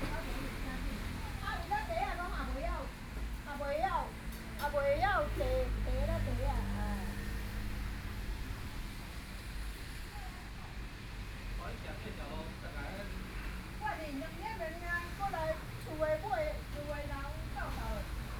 Zhongyang N. Rd., Beitou Dist. - Chat
Chat, Traffic Sound, Rainy days, Clammy cloudy, Binaural recordings, Zoom H4n+ Soundman OKM II